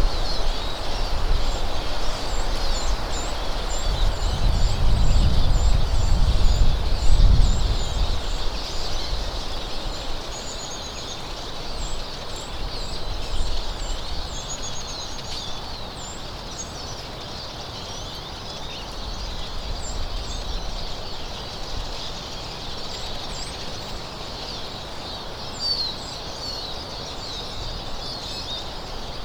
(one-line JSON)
{"title": "Sasiono, forest path towards the sea - sparrows gathering", "date": "2015-08-17 11:14:00", "description": "hundreds of sparrows chirping and pacing around the trees. i have never seen them in those woods so it was a very unusual event. they flew away a bit when i approached but still were very active.", "latitude": "54.79", "longitude": "17.74", "altitude": "12", "timezone": "Europe/Warsaw"}